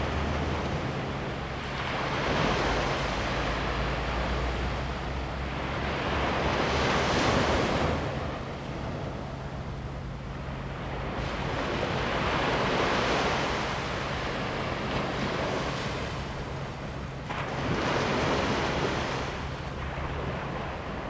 Tamsui District, New Taipei City, Taiwan - Sound of the waves
On the beach, Sound of the waves
Zoom H2n MS+XY
5 January 2017